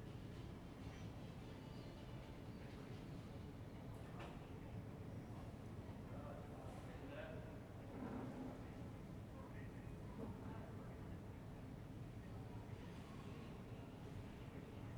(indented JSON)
{
  "date": "2021-06-11 18:57:00",
  "description": "\"Round seven p.m. terrace with barking Lucy, organ, voices, and bells in the time of COVID19\": soundscape.\nChapter CLXXIV of Ascolto il tuo cuore, città. I listen to your heart, city\nFriday, June 11th, 2021. Fixed position on an internal terrace at San Salvario district Turin. An electronic organ is playing, the bells ring out and Lucy barks as is her bad habit. More than one year and two months after emergency disposition due to the epidemic of COVID19.\nStart at 6:57: p.m. end at 7:35 p.m. duration of recording 36’28”",
  "latitude": "45.06",
  "longitude": "7.69",
  "altitude": "245",
  "timezone": "Europe/Rome"
}